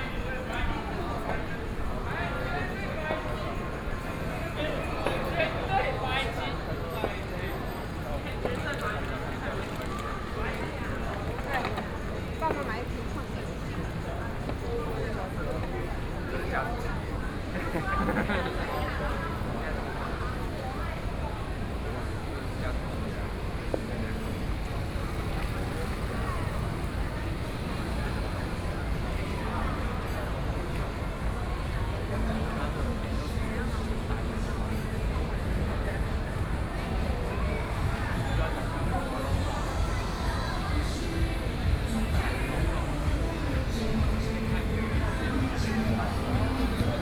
Sec., Wuchang St., Taipei City - walking in the Street
Aggregation street theater, Hip-hop competitions, The crowd, Binaural recordings, Sony PCM D50 + Soundman OKM II